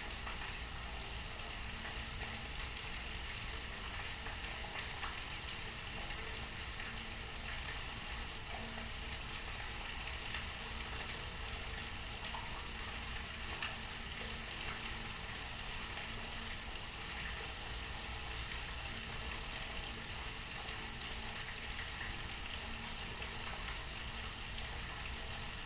愛知 豊田 maintenance hatch water